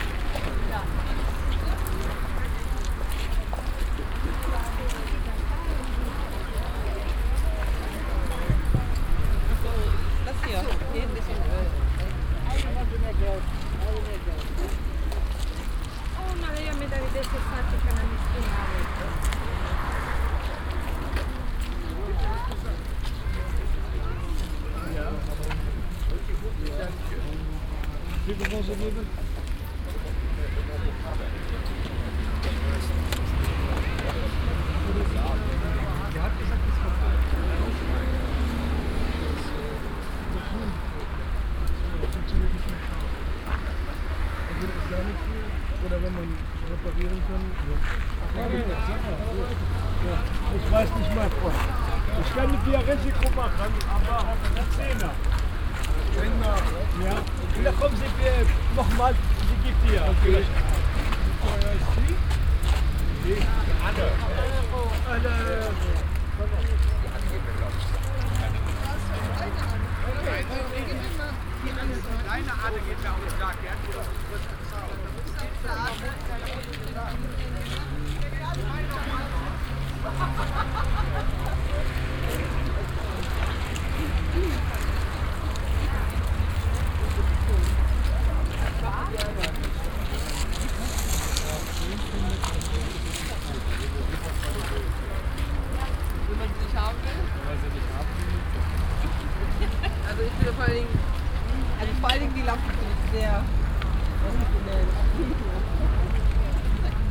sunday morning, regular flee market on a parking area
soundmap nrw: social ambiences/ listen to the people in & outdoor topographic field recordings